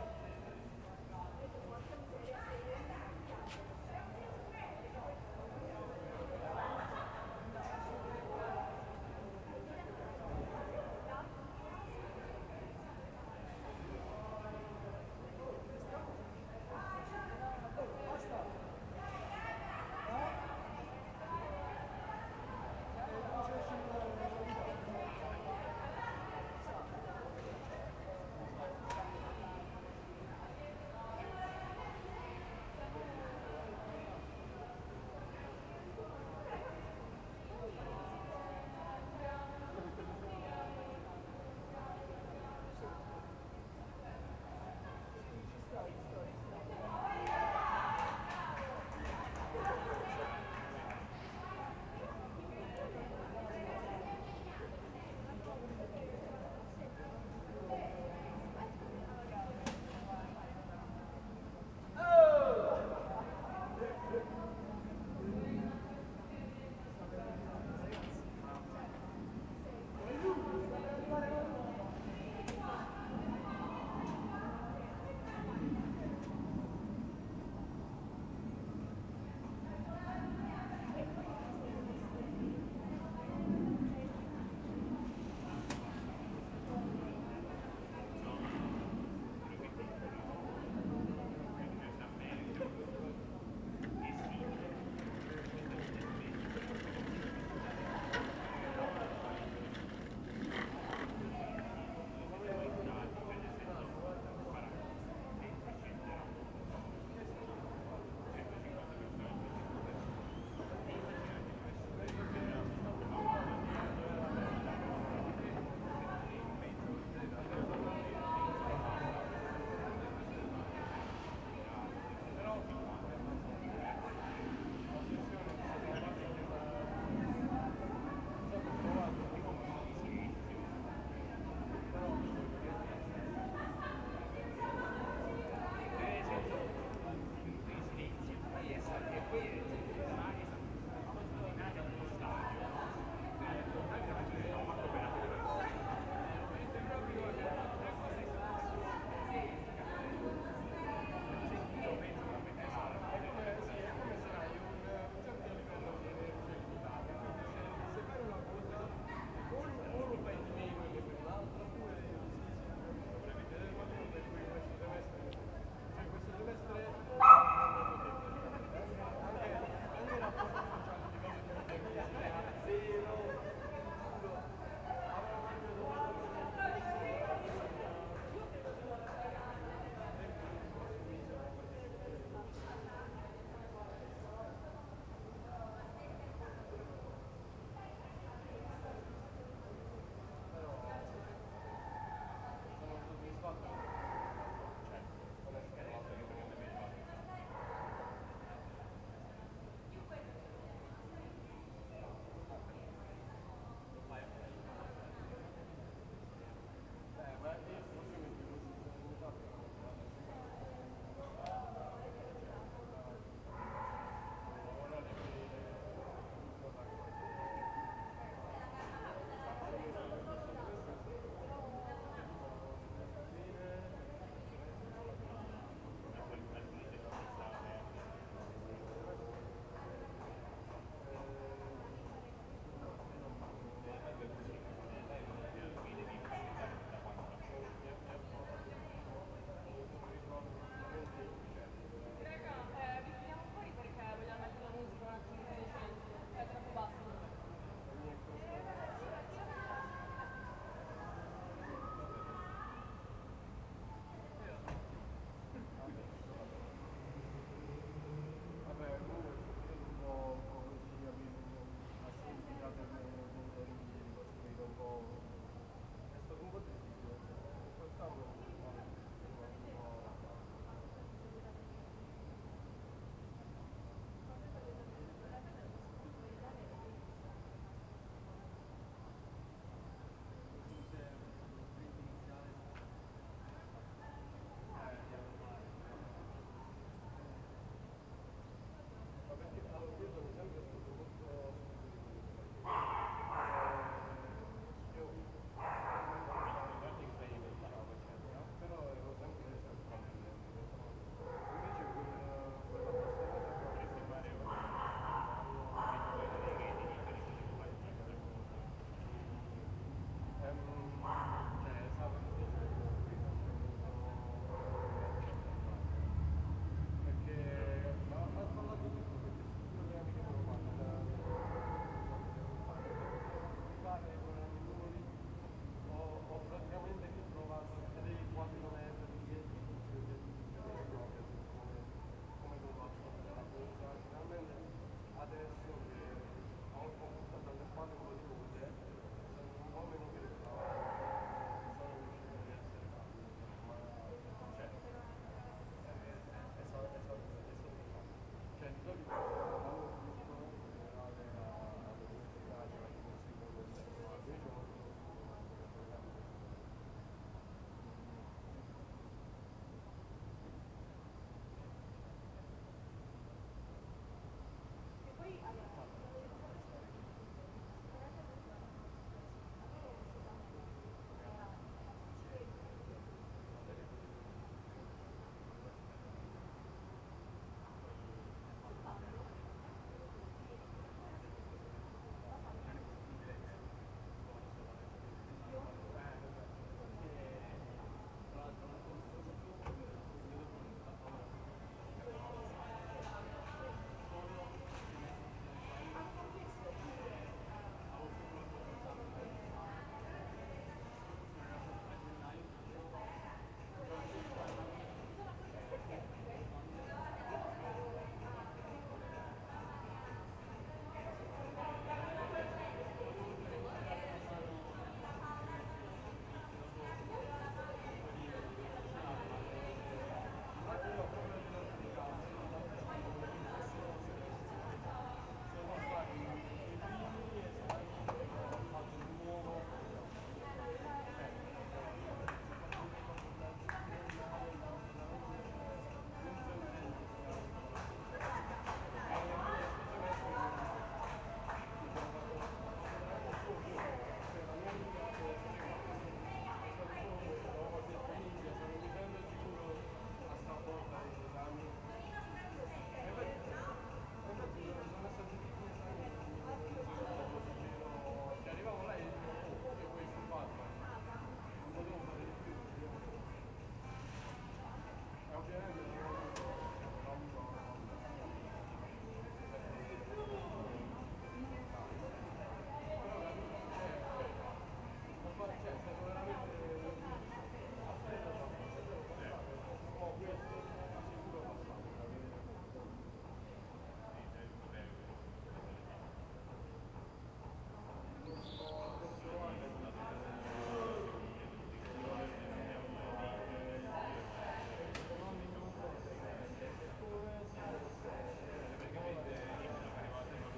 "Terrace at night with student’s voices and barking Lucy in the time of COVID19" Soundscape
Chapter CLXXVIII of Ascolto il tuo cuore, città. I listen to your heart, city
Friday July 16th 2021. Fixed position on an internal terrace at San Salvario district Turin, About than one year and four months after emergency disposition due to the epidemic of COVID19.
Start at 11:22 p.m. end at 9:24 p.m. duration of recording 13'36'', sunset time at 09:20.